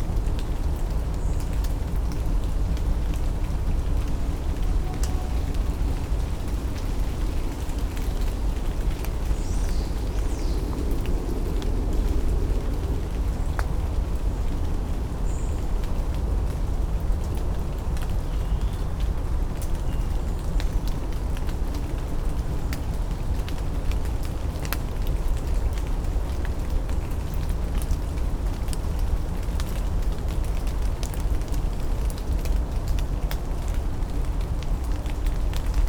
Morasko Nature Reserve - small dam
morning ambience in the forest on a damp autumn morning. water drops falling off the leaves into dried, muddy stream. (roland r-07)
wielkopolskie, Polska, September 2019